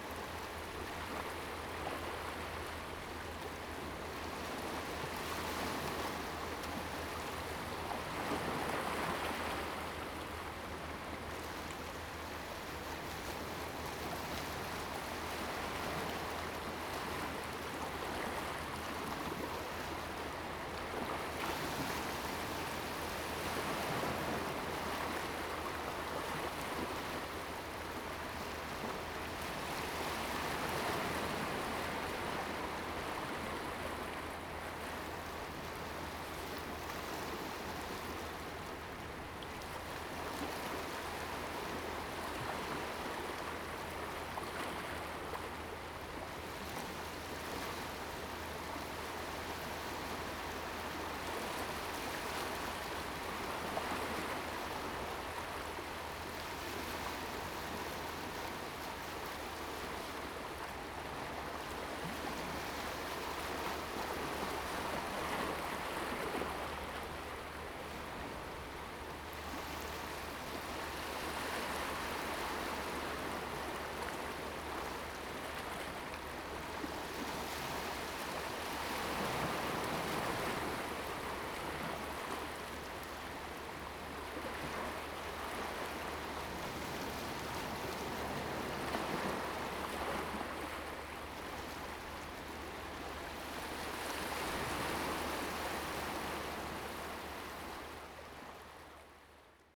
At the beach, sound of the Waves
Zoom H2n MS+XY